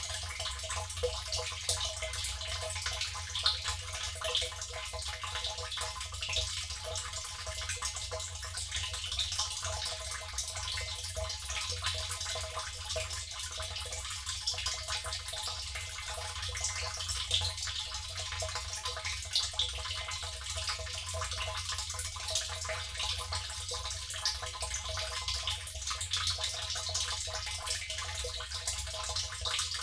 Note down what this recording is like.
water butt filling ... dpa 4060s on pegs to Zoom H5 ... one water butt connected to another ...